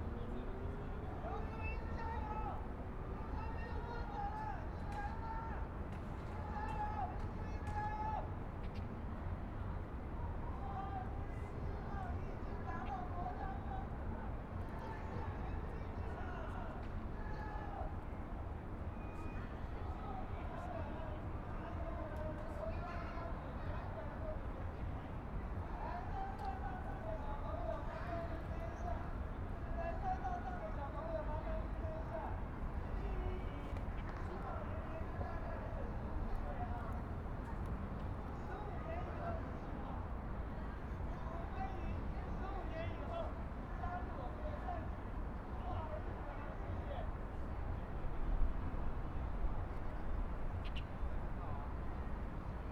Taipei EXPO Park, Taiwan - Sitting in the park
Sitting in the park, Birds singing, Aircraft flying through, Traffic Sound, Binaural recordings, Zoom H4n+ Soundman OKM II